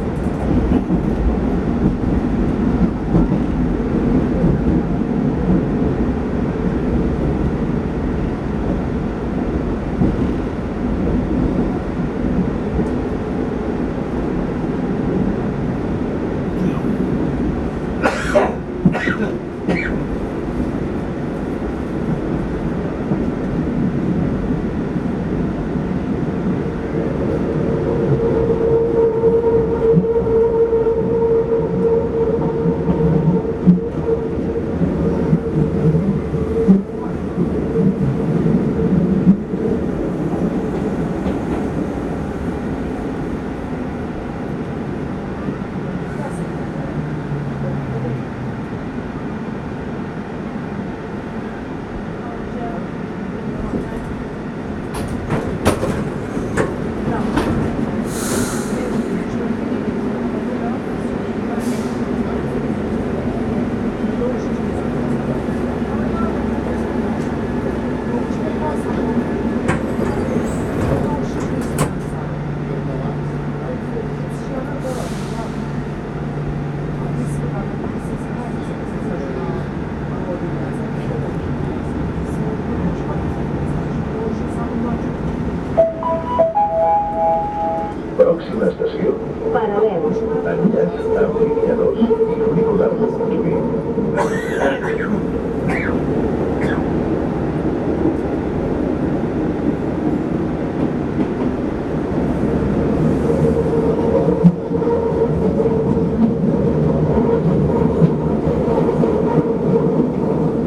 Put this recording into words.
Barcelona, Metrofahrt von Liceu nach Sants Estacio, 21.10.2009